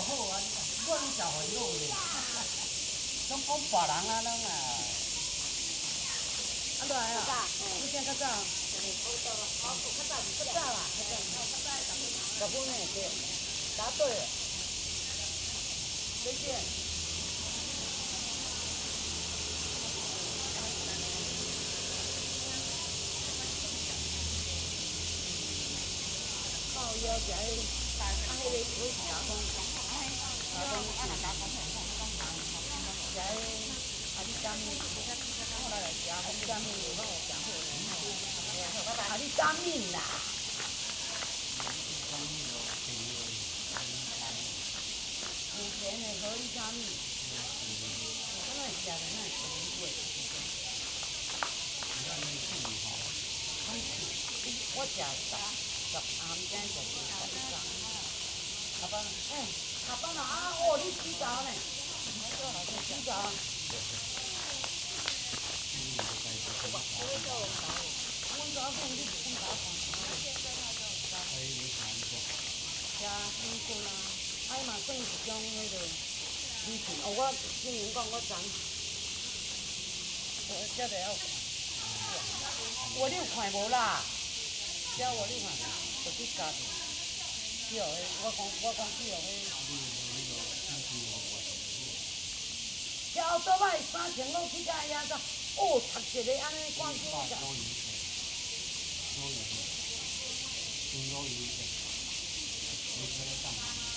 Fuyang Eco Park, 大安區, 台北市 - at the park entrance
at the park entrance, Cicadas cry, Footsteps, Pebbles on the ground
Zoom H2n MS+XY